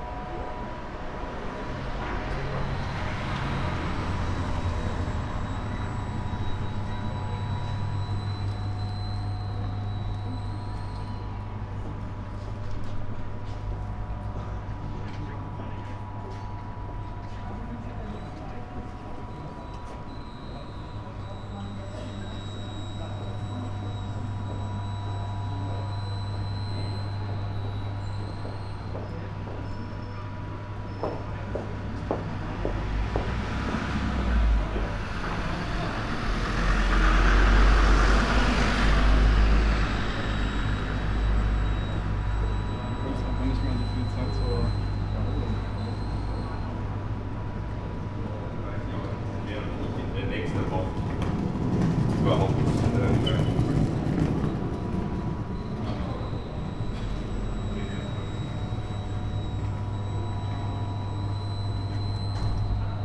bookstore, Breite Str., Köln, Deutschland - advertising, rotating
sound of a rotating advertising, entrance of a bookstore, Köln
(Sony PCM D50, Primo EM172)